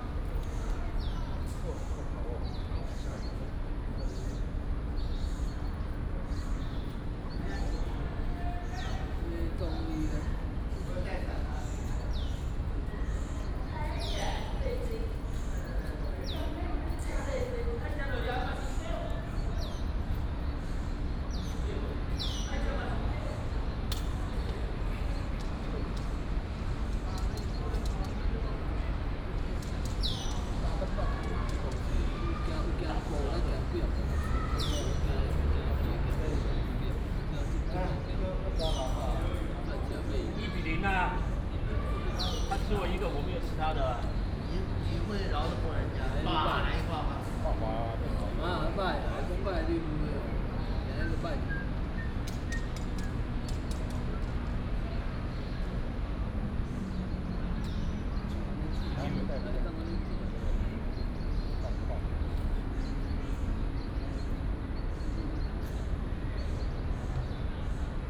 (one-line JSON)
{"title": "National Dr. Sun Yat-sen Memorial Hall, Taipei City - Play chess", "date": "2015-06-22 15:22:00", "description": "Play chess, A group of men playing chess, Hot weather", "latitude": "25.04", "longitude": "121.56", "altitude": "17", "timezone": "Asia/Taipei"}